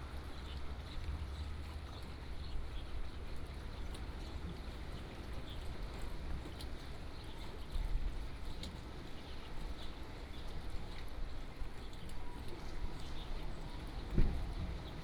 香蕉灣漁港, Hengchun Township - At the fishing port
At the fishing port, Bird sound, Sound of the waves, tide, Dog barking